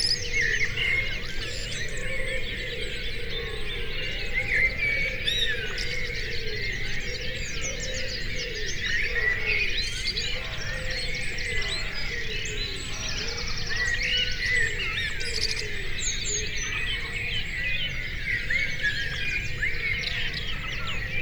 Dawn, Malvern, UK - 4am
Again recorded from 4am but this time the microphone rig is on the other side of the roof facing west towards the Malvern Hills a few hundred yards away and rising to around 1000 feet at this point. The roof has another feature facing the right hand mic which seems to produce an effect visible on the computer. At 9'47" my neighbour about 30 yards away across the road comes out to collect his milk and says "Morning Birdies" which stops all the most local bird calls for a time. Around 23'00 I think that is the Muntjac trying to make itself heard.This is another experiment with overnight recordings of longer duration.
MixPre 6 II with 2 x Sennheiser MKH 8020s in a home made wind baffle.
West Midlands, England, United Kingdom, 9 June 2021, 04:00